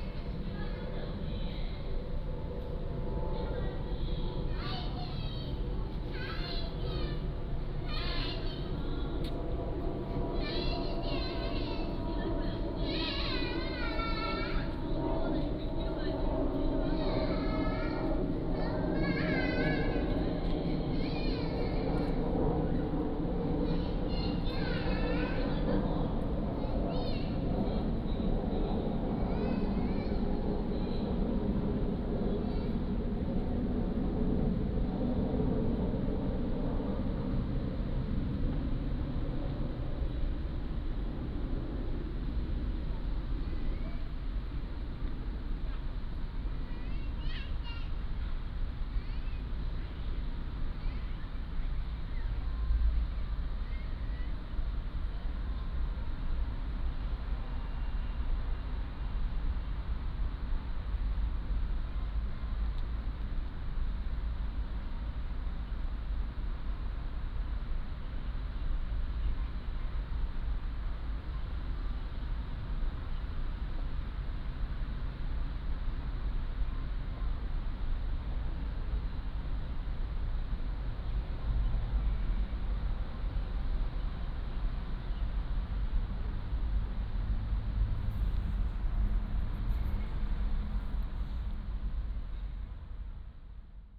Nae-dong, Gimhae-si, Gyeongsangnam-do, 韓国 - The Plaza
The Plaza, Aircraft flying through
Gyeongsangnam-do, South Korea, 15 December 2014, 17:21